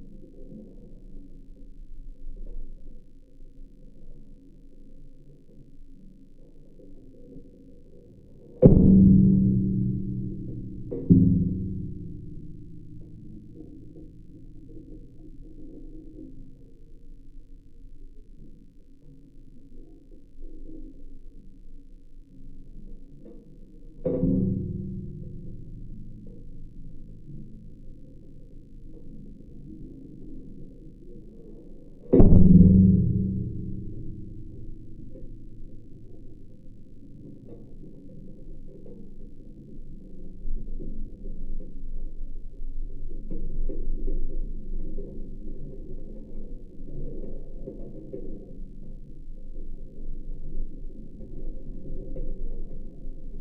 Kaunas, Lithuania, flagpole
High flagpole at Kaunas castle. Geophone contact recording.
Kauno apskritis, Lietuva, 17 August 2021, ~17:00